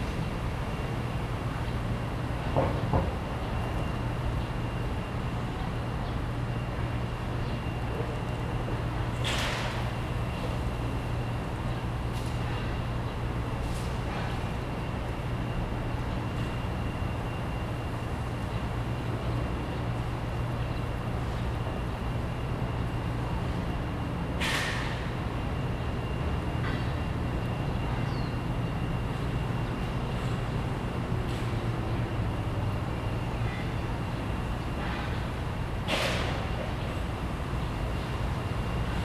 Liugongjun Park, Taipei City - in the Park
in the park, Hot weather, Bird calls, Construction noise
Zoom H2n MS+XY
Taipei City, Taiwan